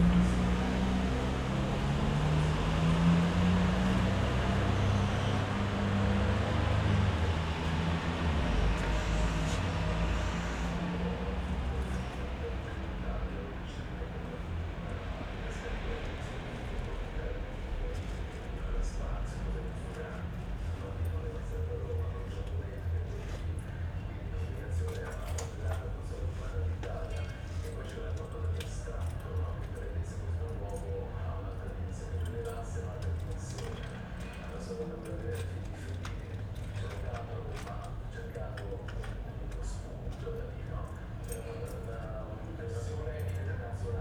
Via Capuano, Trieste, Italy - at night, a TV, someone's coming home
on the stairs below Via Capuano, weekend night, sound of a TV through an open window, someone climbs up the stairs and enters a door.
(SD702, AT BP4025)
7 September 2013, 01:00